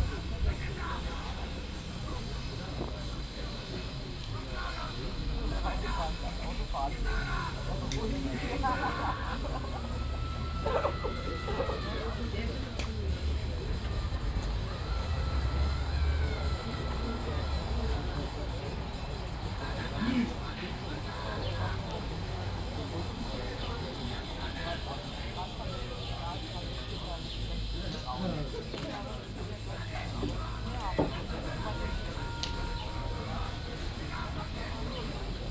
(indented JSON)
{
  "title": "berlin, lohmühle wagenburg - sunday evening ambience",
  "date": "2010-06-27 19:50:00",
  "description": "informal living space, people reside in waggons close to the canal. summer sunday evening ambience. (binaural recording, use headphones)",
  "latitude": "52.49",
  "longitude": "13.44",
  "altitude": "32",
  "timezone": "Europe/Berlin"
}